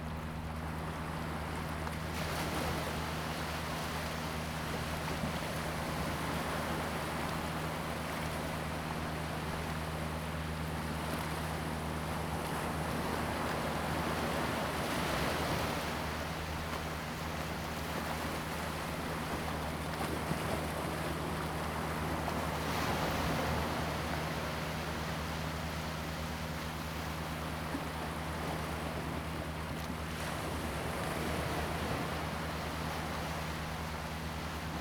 龍門村, Huxi Township - Wave
At the beach, sound of the Waves, There are boats on the sea
Zoom H2n MS+XY
Penghu County, Taiwan, 21 October, ~11:00